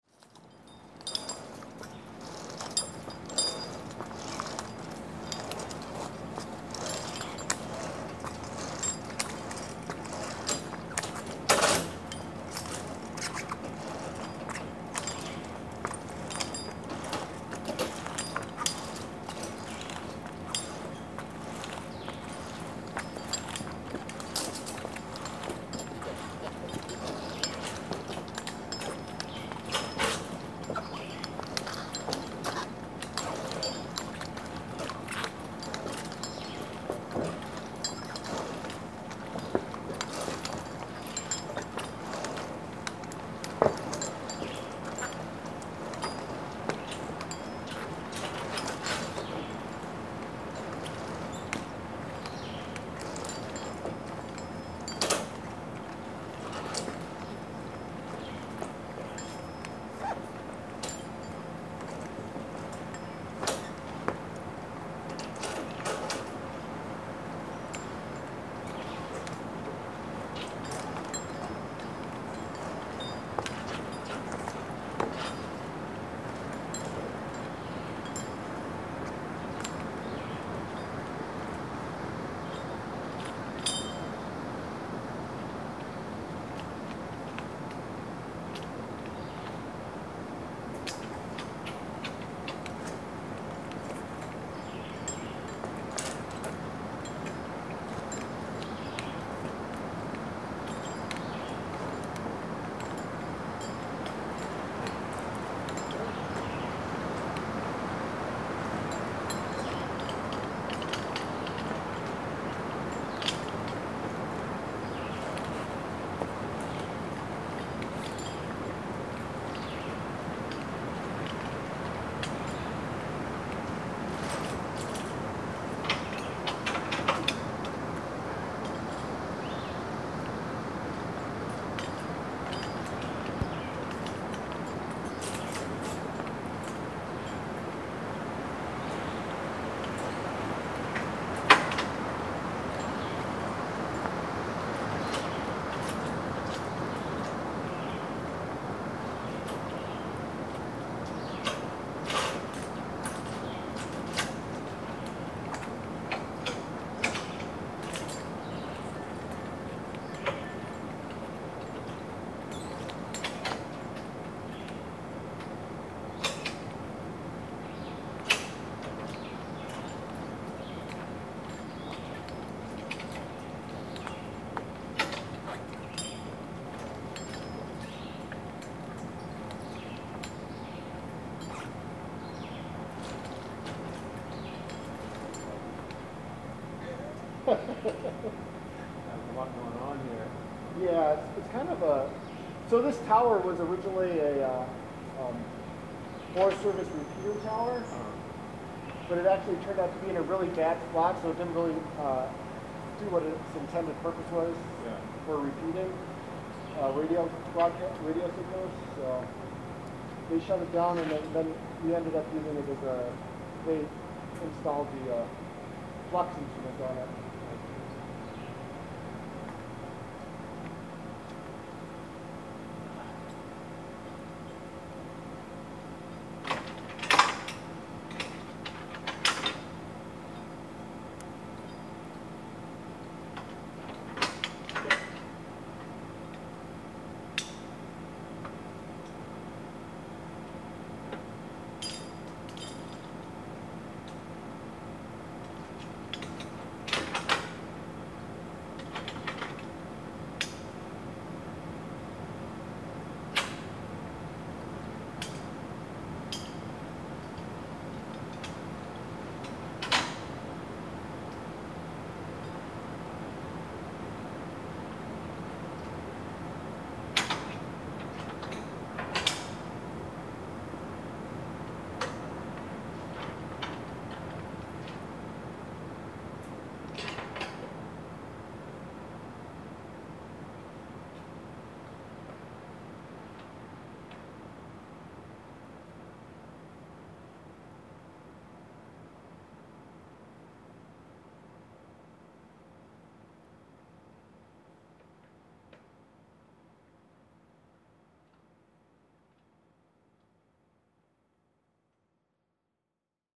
Ascending 80 feet, above the tree canopy, up a sensor-laden flux tower with a researcher from the University of Wisconsin. Metal sounds are from the climbing harness hardware. Generator buzz, birds, slight breeze in trees.
Wisconsin, United States of America